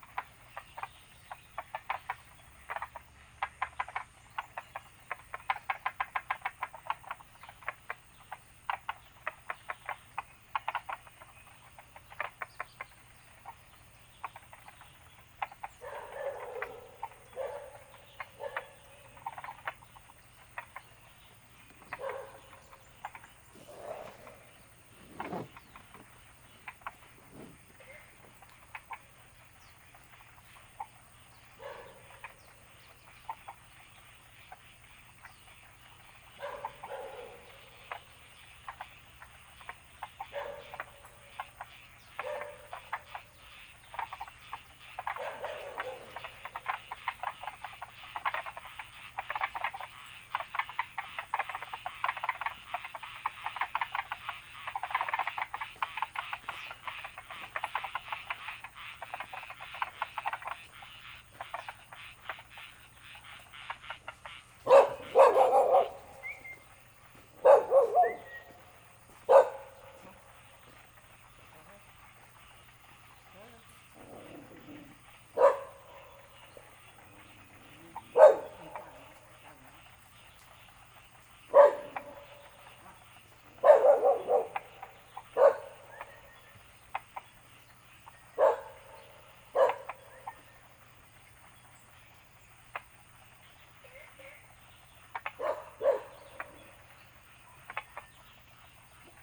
草湳溼地農場, 埔里鎮桃米里 - Frogs chirping
Frogs chirping, Bird sounds, Dogs barking, Ecological pool
Zoom H2n MS+XY